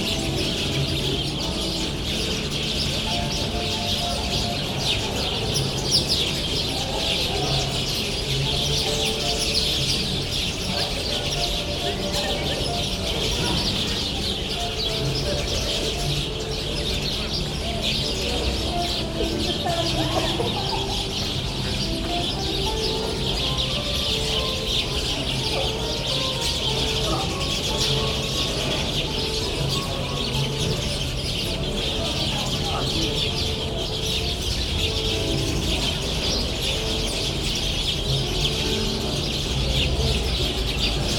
Gyumri, Arménie - Sparrows
In the main pedestrian road of Gyumri, a tree has one thousand sparrows. On the evening, it makes a lot of noise !